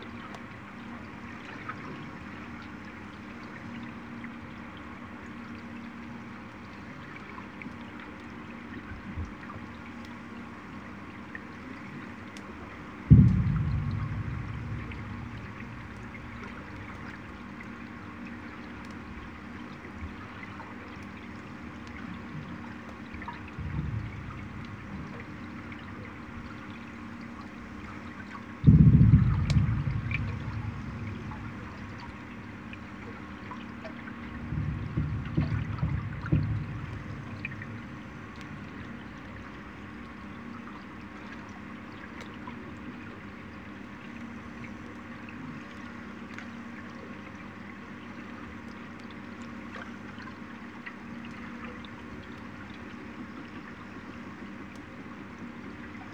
peer, barreiro
still boat on the peer of barreiro deep at night
Barreiro, Portugal, 10 September 2011, 15:01